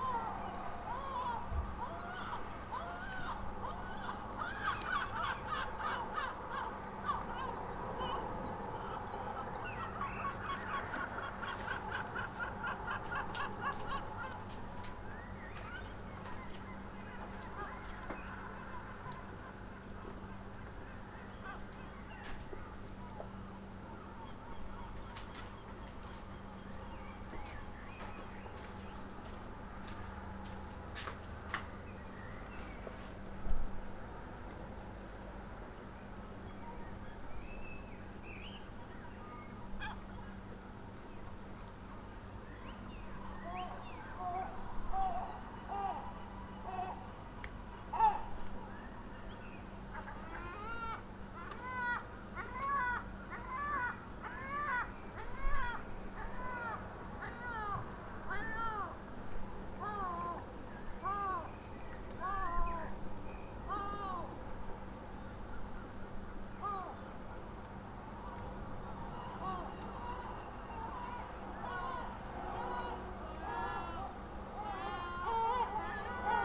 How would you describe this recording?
at dawn, nocturnal and day sonic scape merges, celebrating life with full voices ...